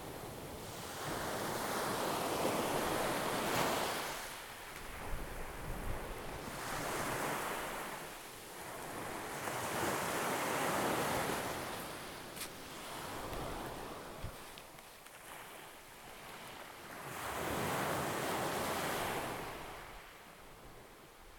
Agiofaraggo Canyon Footpath, Festos, Greece - Waves on pebbles in Agiofarago (best)
The interaction of the water with the pebbles has been captured in this recording.
16 August